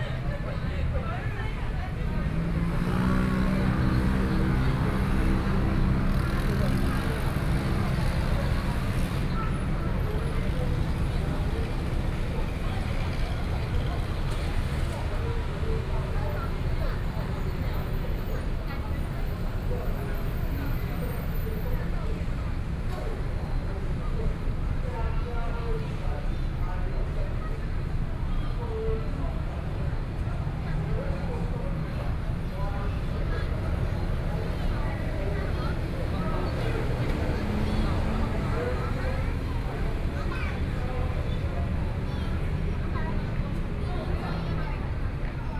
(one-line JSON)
{"title": "新興區玉衡里, Kaohsiung City - Sitting on the roadside", "date": "2014-05-15 19:59:00", "description": "Sitting on the roadside, in the Shopping district, Traffic Sound", "latitude": "22.62", "longitude": "120.30", "altitude": "8", "timezone": "Asia/Taipei"}